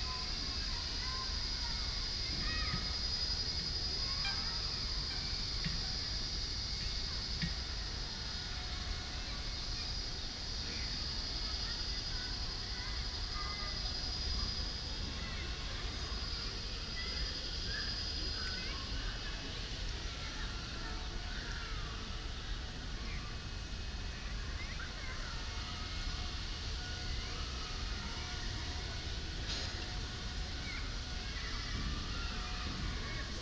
General atmosphere with kids and construction work.
Binaural recording.
Den Haag, Netherlands, 28 August 2017